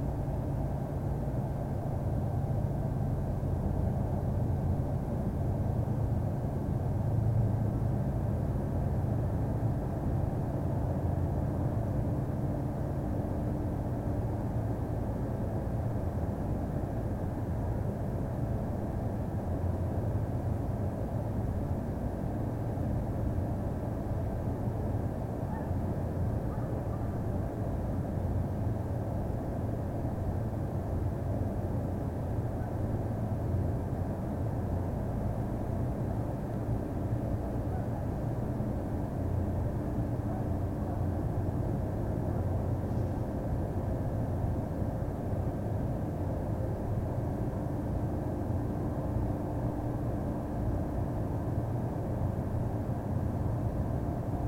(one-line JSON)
{"title": "Crescent Heights, Calgary, AB, Canada - thing that was on a wall", "date": "2015-12-08 03:40:00", "description": "In the desperate search for things that buzzed or created its own ambient noise, I found a weird box on a wall. Annnnd this was the weird box. I used a glove as a wind sock but I think it worked pretty okay\nZoom H4N Recorder", "latitude": "51.05", "longitude": "-114.05", "altitude": "1051", "timezone": "America/Edmonton"}